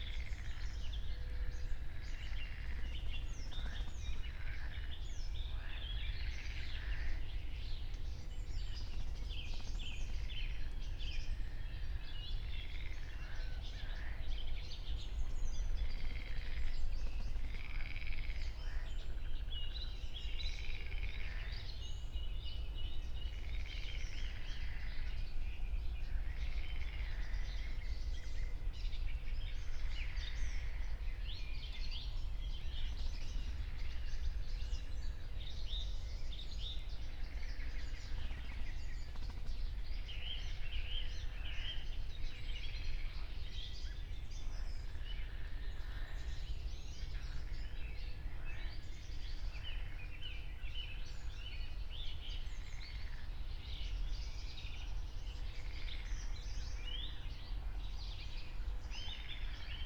03:30 Berlin, Wuhletal - Wuhleteich, wetland